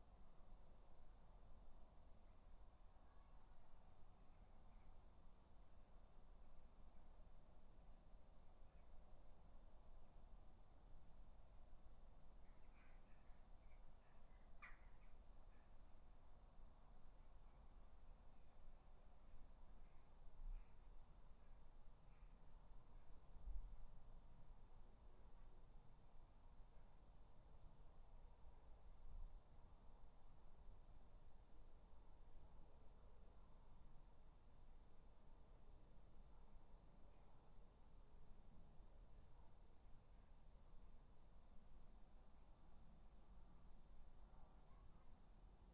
3 minute recording of my back garden recorded on a Yamaha Pocketrak
Solihull, UK, 13 August